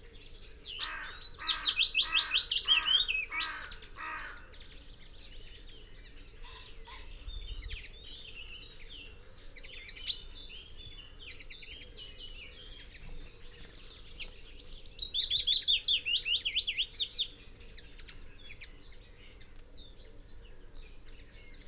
Green Ln, Malton, UK - willow warbler soundscape ...
willow warbler soundscape ... xlr sass on tripod to zoom h5 ... bird song ... calls ... from ... blackcap ... yellowhammer ... skylark ... blackbird ... goldfinch ... pheasant ... red-legged partridge ... wren, ... crow ... chaffinch ... dunnock ... whitethroat ... blue tit ... wood pigeon ... linnet ... unattended time edited ... extended recording ...